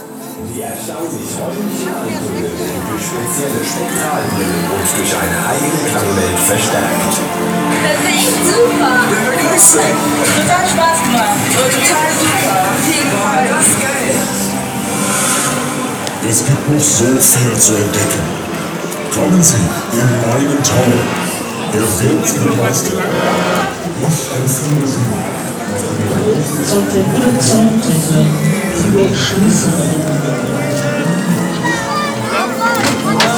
xmas-market, berlin, fun fair, december sounds
Berlin, Germany, 13 December 2010, 21:15